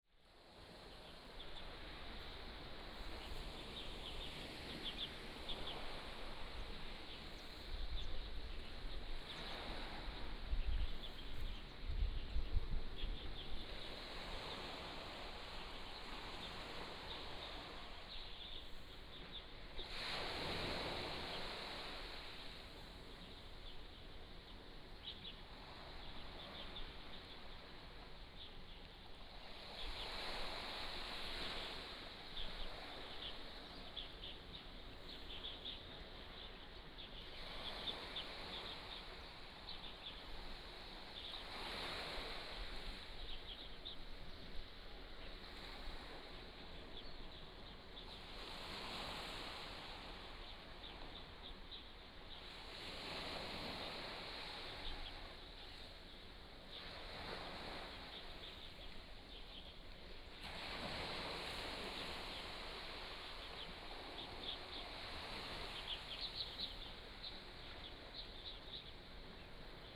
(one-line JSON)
{"title": "橋仔村, Beigan Township - Small beach", "date": "2014-10-15 12:54:00", "description": "Small beach, Sound of the waves, Small fishing village", "latitude": "26.23", "longitude": "119.99", "altitude": "17", "timezone": "Asia/Taipei"}